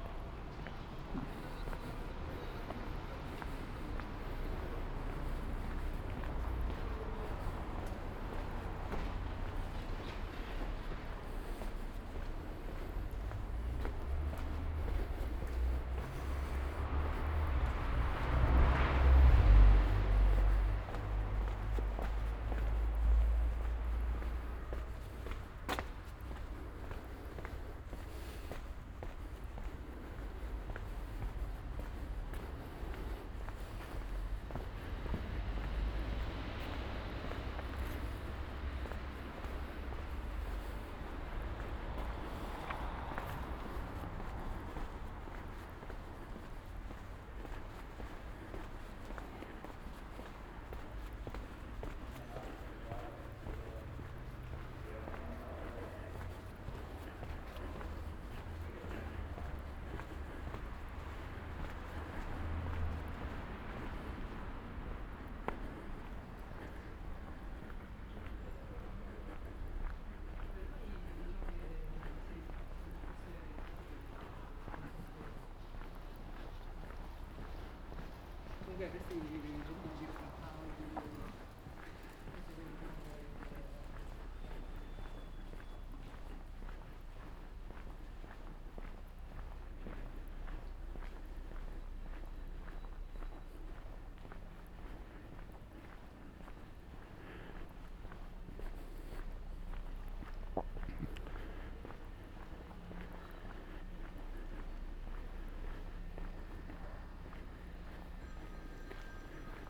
Ascolto il tuo cuore, città. I listen to your heart, city. Several Chapters **SCROLL DOWN FOR ALL RECORDINGS - “La flanerie aux temps du COVID19, un an après”: Soundwalk
“La flanerie aux temps du COVID19, un an après”: Soundwalk
Chapter CLXI of Ascolto il tuo cuore, città. I listen to your heart, city
Wednesday, March 10th, 2021. Same path as 10 March 2020, first recording for Chapter I: “walking in the movida district of San Salvario, Turin the first night of closure by law at 6 p.m.of all the public places due to the epidemic of COVID19.”
Start at 8:58 p.m., end at h. 9:29 p.m. duration of recording 31'31''
The entire path is associated with a synchronized GPS track recorded in the (kml, gpx, kmz) files downloadable here:
Piemonte, Italia, 10 March 2021